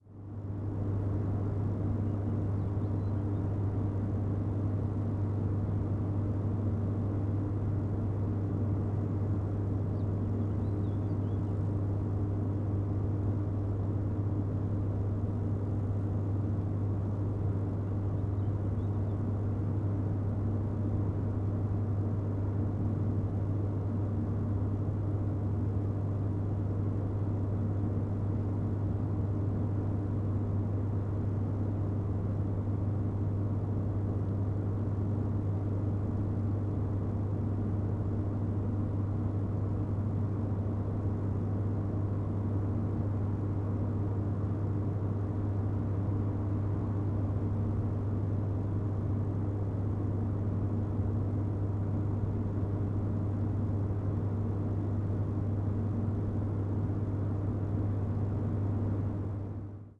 Niederaußem, powerplant - transformer station
hum and buzz of transformer station at powerplant Niederaußem near Cologne